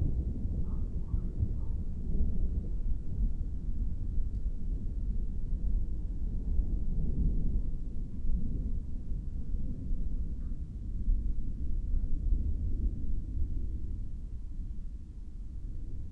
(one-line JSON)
{"title": "Chapel Fields, Helperthorpe, Malton, UK - moving away thunderstorm ...", "date": "2020-06-26 22:54:00", "description": "moving away thunderstorm ... xlr SASS on tripod to Zoom F6 ... dogs ... ducks ... voices in the background ...", "latitude": "54.12", "longitude": "-0.54", "altitude": "77", "timezone": "Europe/London"}